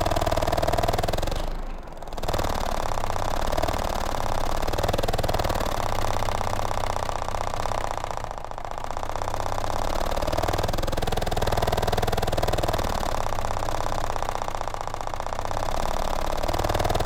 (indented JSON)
{
  "title": "Hálsasveitarvegur, Iceland - Cold wind through garage door",
  "date": "2019-06-05 15:00:00",
  "description": "Cold wind through garage door.",
  "latitude": "64.73",
  "longitude": "-20.94",
  "altitude": "202",
  "timezone": "GMT+1"
}